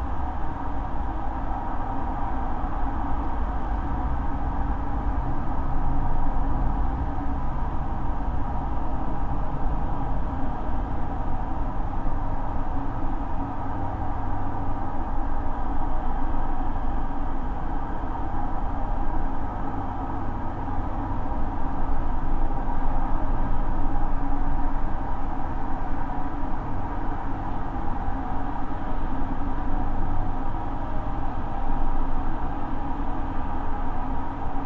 Utena, Lithuania, big metallic sculpture drone
Metallic sculpture in the yard of art school. Multichanel recording: omni, contact, geophone.
Utenos rajono savivaldybė, Utenos apskritis, Lietuva, 25 March 2021, 18:10